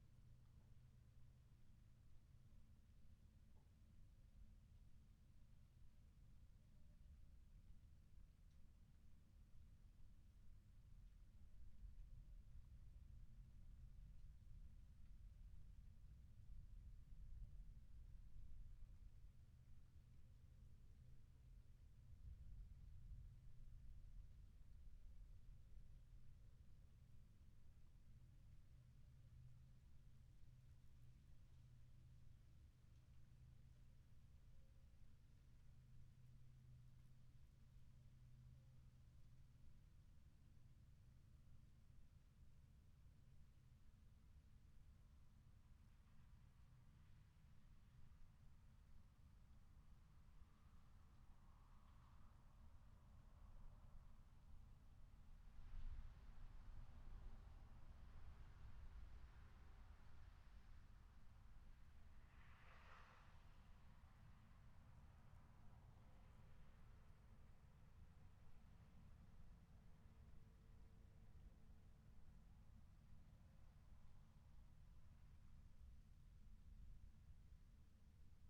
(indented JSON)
{
  "title": "Chapel Fields, Helperthorpe, Malton, UK - occasional thunder ...",
  "date": "2019-08-04 21:15:00",
  "description": "occasional thunder ... SASS on a tripod ... bird calls ... starling ... background noise ... traffic etc ...",
  "latitude": "54.12",
  "longitude": "-0.54",
  "altitude": "77",
  "timezone": "Europe/London"
}